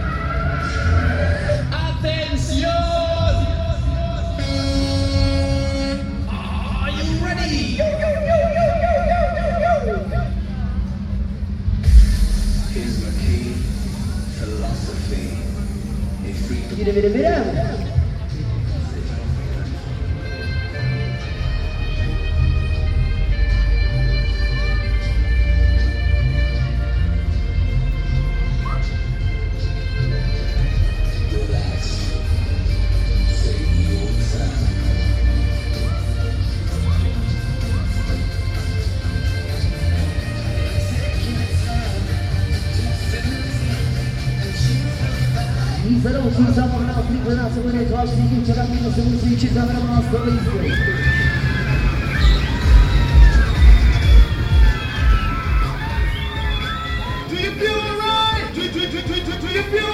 Lunapark at Holešovice
Soundwalk at the lunapark, where each spring a folk fair takes place.